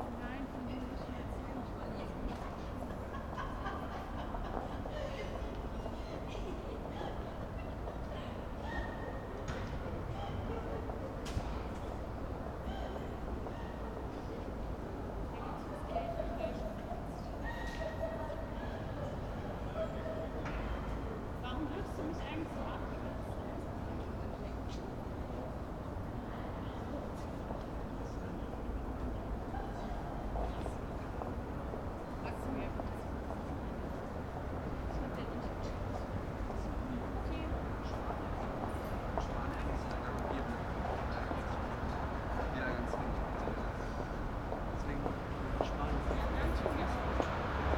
brüsseler platz - autumn
brüsseler platz, autumn, cold, almost freezing, people pass quickly, steps, summer's gone